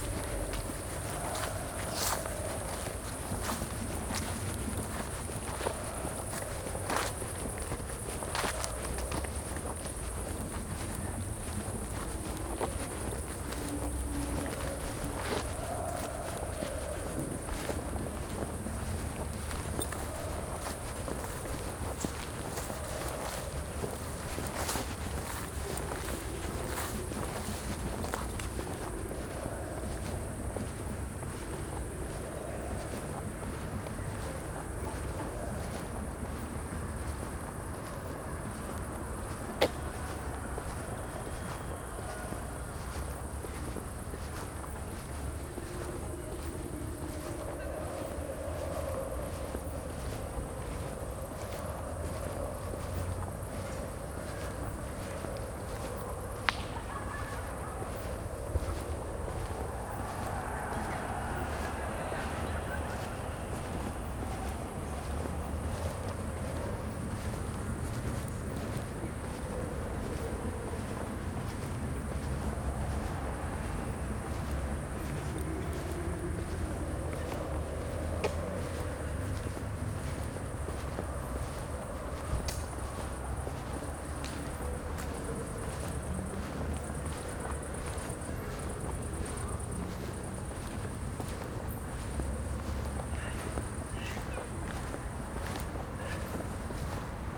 walk through dark Mestni park, from this spot to the backyard of Mladinska 2.
(PCM D-50, DPA4060)
Maribor, Slovenia, 28 August